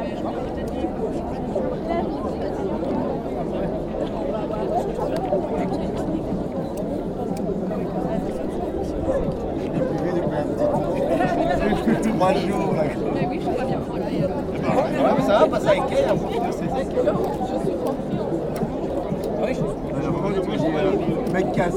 Ottignies-Louvain-la-Neuve, Belgique - Students evening break
After a long winter, it's the first real strong sunshine. I was curious to see the lake beach and make the detour. It was full of students taning, joking and drinking warm bad beers. This sound is an overview of the area.